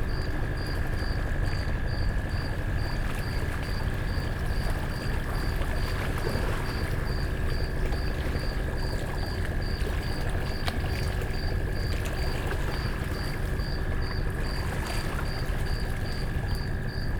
Lake shore, Kariba Lake, Sinazongwe, Zambia - last night before full-moon break...
last night of fishing before the moon change is always pretty busy...
Southern Province, Zambia, 21 July, 23:20